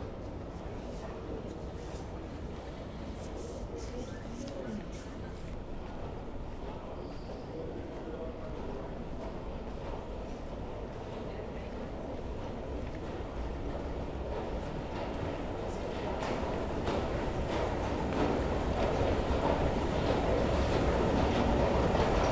Antwerpen, Belgique - Groenplaats metro station
A long walk into the Groenplaats metro station (it means the green square, but today nothing is green here !). Starting with an accordion player. After, some metros passing in the tunnel, with strong rasping, and at the end, a girl singing something I think it's Alela Diane, but I'm not quite sure (to be completed if you recognize !).